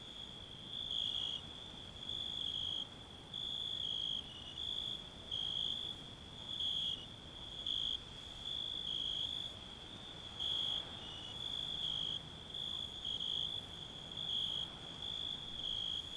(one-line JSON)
{"title": "Orhei Vechi, Moldova - The Cricket Symphony at Old Orhei", "date": "2018-09-28 20:00:00", "description": "It was at the end of the summer and it started to get cold after the sunset. The recording was done with a Zoom H6 and 2 microphones: Zoom SSH-6 (Shotgun mic) that was hiding in the bushes with the crickets and Shure Sm58 (Omnidirectional mic) some meters away. This is a raw version of the recording. Thank you!", "latitude": "47.31", "longitude": "28.96", "altitude": "118", "timezone": "Europe/Chisinau"}